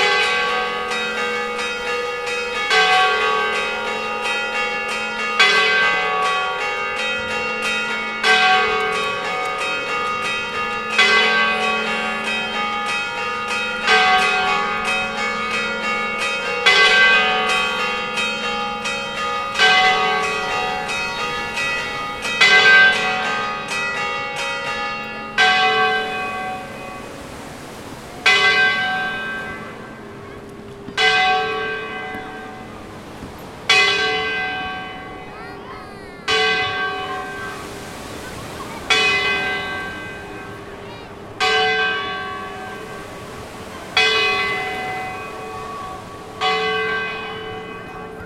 Camogli Genua, Italien - Feierabendsiesta
Feierabendstimmung an der Küste von Camogli. Das Glockenspiel der Kirche um 17.30 Uhr läutet den Abend ein. Meeresrauschen lädt zum Träumen ein.
Camogli Genoa, Italy, March 2014